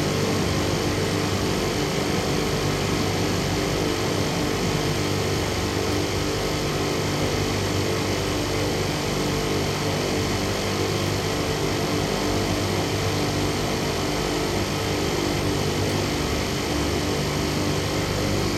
Container port, Valparaíso, Chile - containers
between big stacks of cooling containers - recorded during 'drone lab' workshop @ Tsonami Festival 2014
Región de Valparaíso, Chile